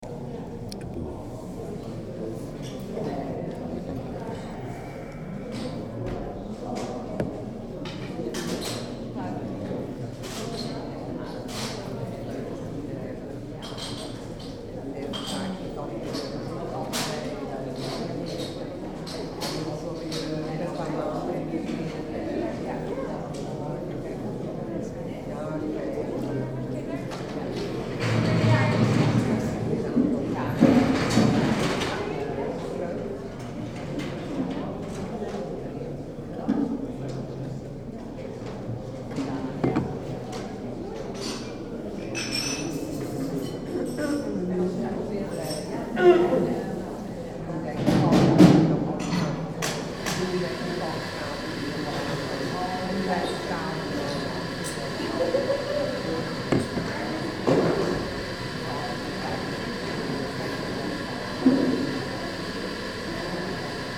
Museum Boijmans van Beuningen, Rotterdam, Nederland - Museum Restaurant
General atmosphere in the museums restaurant.
Zoom H2 recorder internal mics.